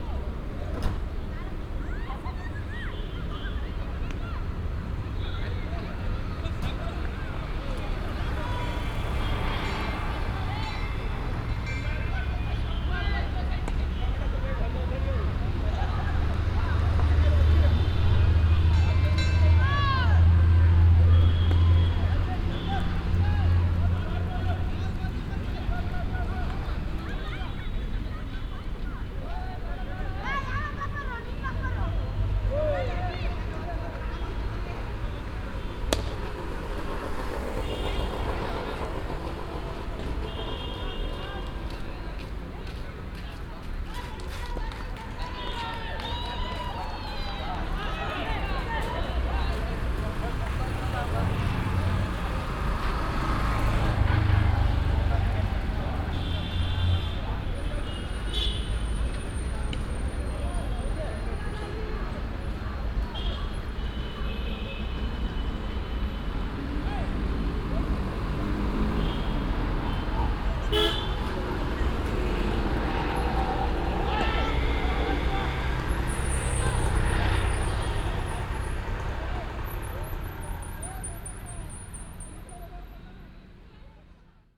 bangalor, cricket field
sunday afternoon, near a sandy field that is used for cricket play. about 5 amateur teams playing parallel the national indian game.
international city scapes - social ambiences and topographic field recordings
Karnataka, India, February 2011